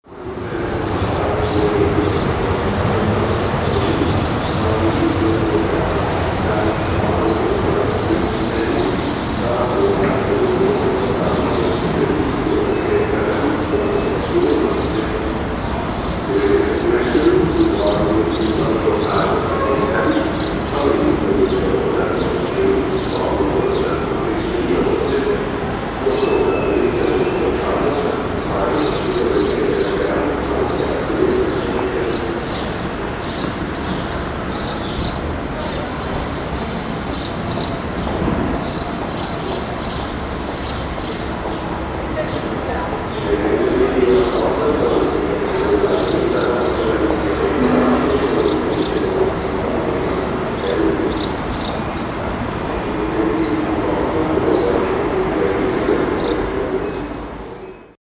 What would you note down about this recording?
sparrows have their nests under the roof above the train platforms. recorded june 16, 2008. - project: "hasenbrot - a private sound diary"